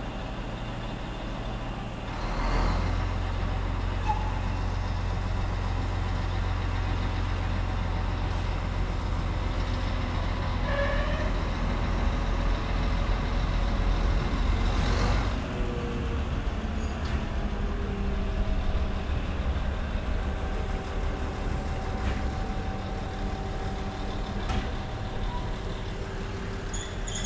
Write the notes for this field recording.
The recording of the ambient with machine sounds at the turntable. Near the Bohdalec and the railway crossing Depo Vršovice.